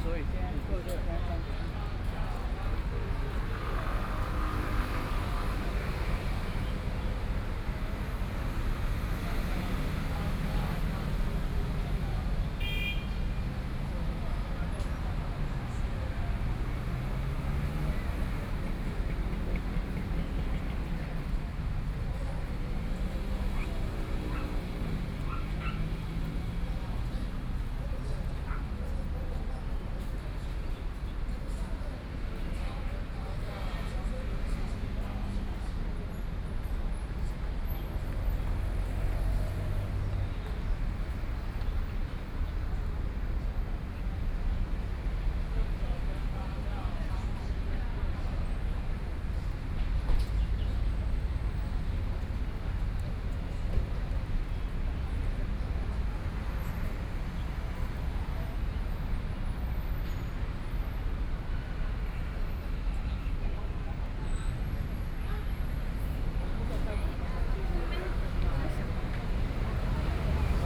中正區幸福里, Taipei City - Sit still
Student activism, Walking through the site in protest, People and students occupied the Legislative Yuan